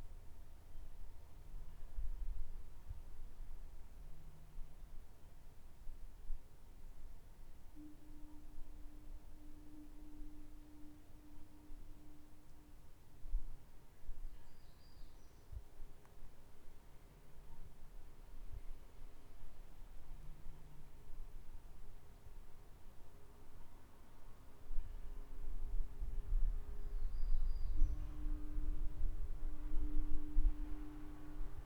Buoy, Ucluelet, BC
Noises from distant buoy, boat and bird in fog